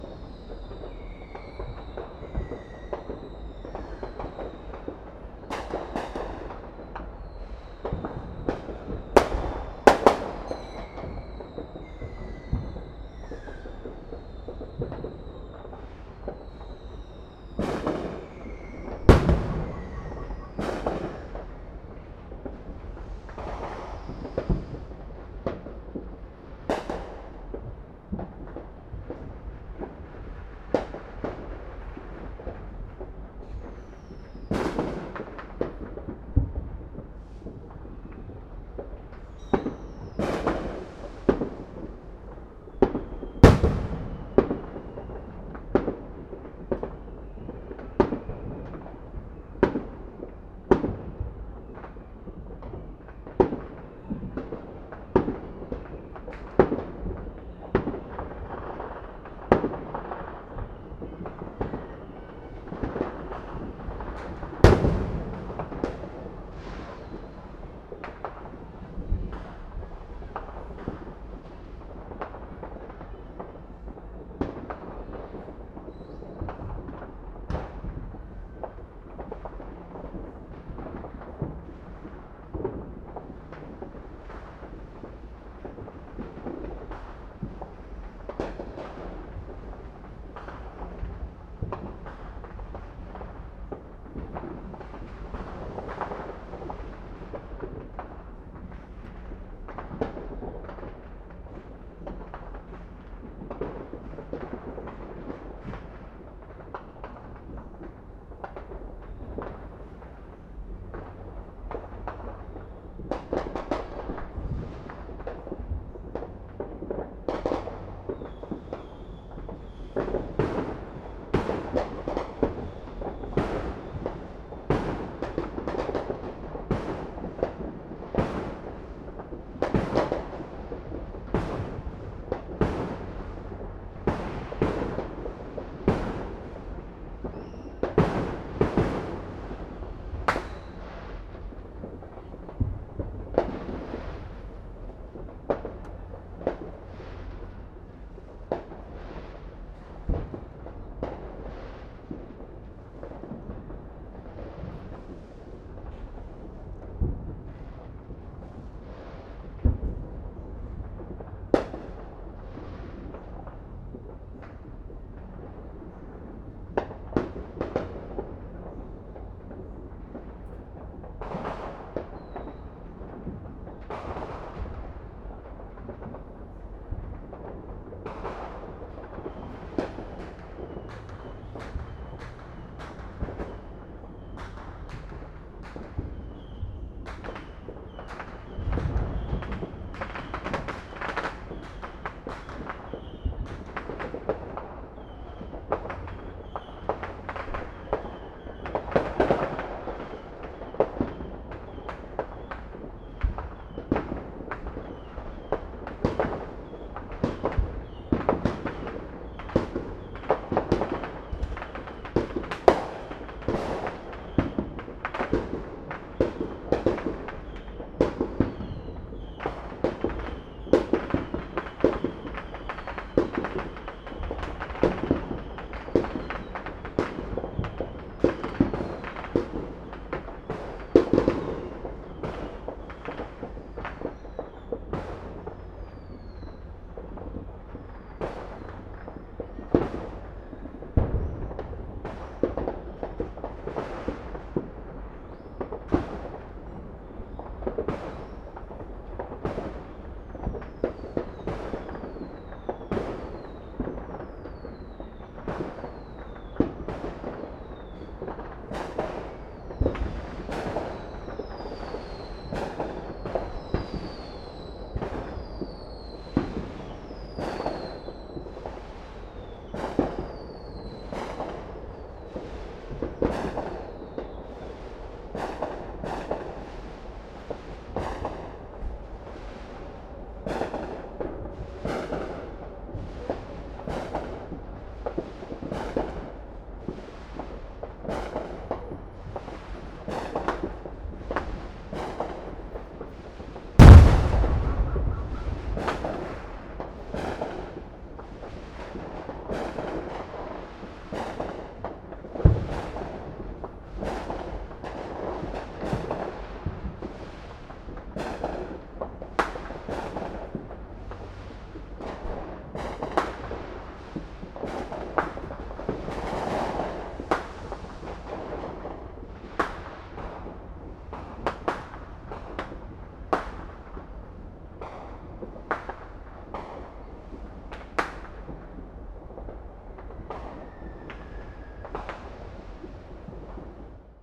East Garfield Park, Chicago, July 4th fireworks - July 4th fireworks
fireworks, July 4th, illegal, celebration, explosive, Chicago
IL, USA, 4 July 2010, 20:54